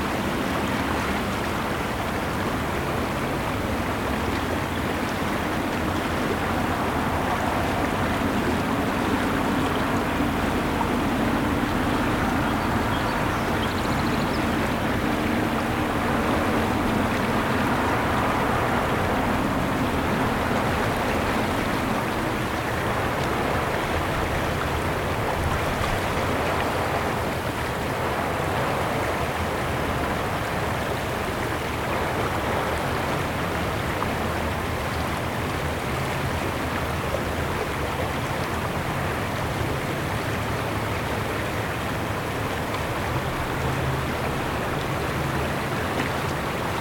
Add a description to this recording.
River bend with rapids, occasional traffic on distant metal bridge.